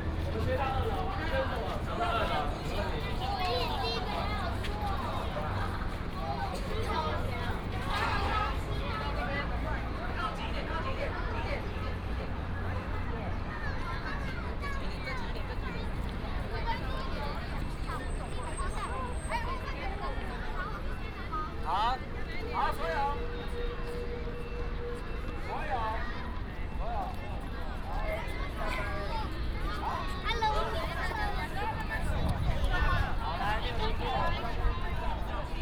{"title": "National Taichung Theater, Xitun Dist., Taichung City - In the first floor of the theater hall", "date": "2017-03-22 12:12:00", "description": "In the first floor of the theater hall, Primary school students, Walk towards exit plaza", "latitude": "24.16", "longitude": "120.64", "altitude": "83", "timezone": "Asia/Taipei"}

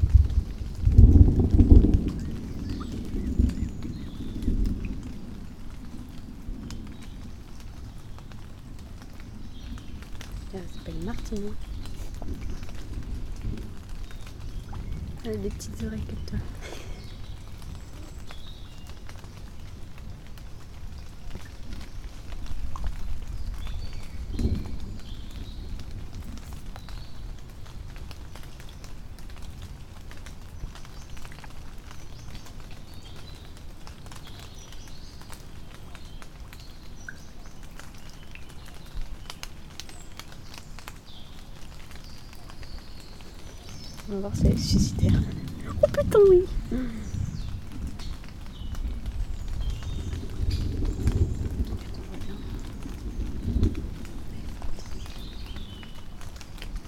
{"title": "Millemont, France - Storm in forest, thousand of baby frogs around us", "date": "2018-05-28 14:34:00", "description": "Recording around a lake during a storm. We observed during the recording thousand of baby frog around us.\nmade by Martiño y Madeleine\n28 mai 2018 14h34\nrecorded with PCM D-100", "latitude": "48.82", "longitude": "1.71", "altitude": "140", "timezone": "Europe/Paris"}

